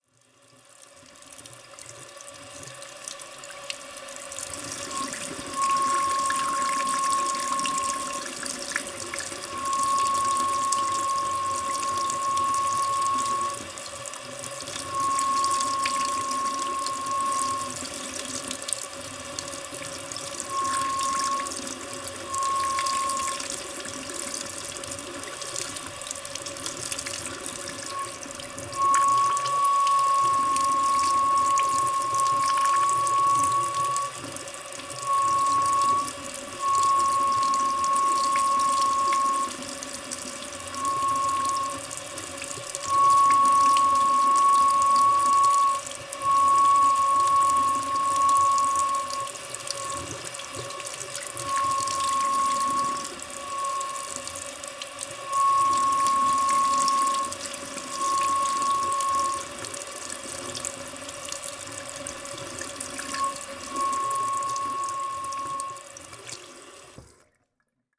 domestic sound. I discovered that when a stream of water from the faucet hits the bottom of my tea brewer on a certain angle, it makes a nice, gentle whistling sound. (roland r-07)
Poznań, Poland, January 2019